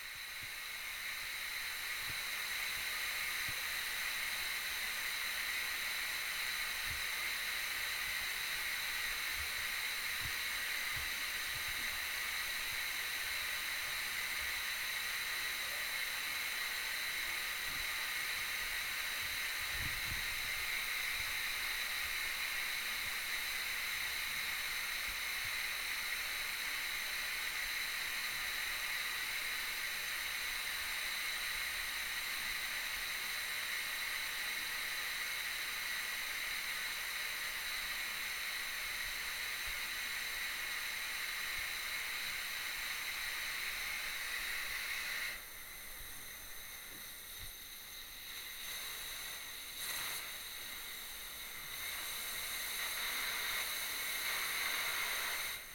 opening the valve of a steam cleaner. the smoothness of the escaping steam sounds fantastic. i really like the soothing quality of it. the sound is velvet and comforting but the steam is scalding and rough. in the second part of the recording the creaking sound of the tarnished thread of the valve.
Poznań, Poland, 26 January